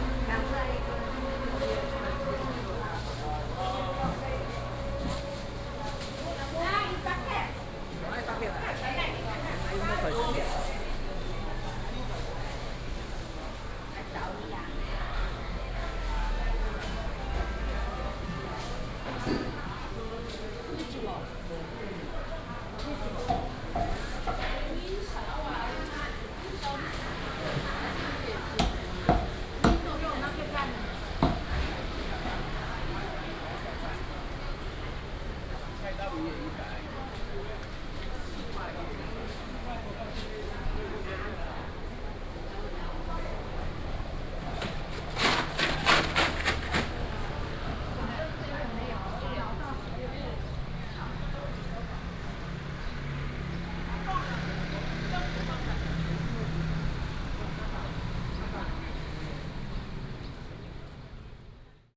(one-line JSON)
{"title": "新屋公有零售市場, Xinwu Dist. - Walking in the market", "date": "2017-07-26 08:06:00", "description": "Walking in the market, traffic sound, Cicada cry", "latitude": "24.97", "longitude": "121.10", "altitude": "92", "timezone": "Asia/Taipei"}